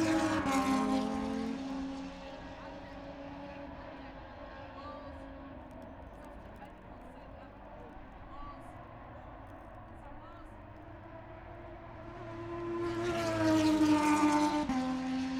british motorcycle grand prix 2022 ... moto two free practice one ... wellington straight opposite practice start ... dpa 4060s clipped to bag to zoom h5 ...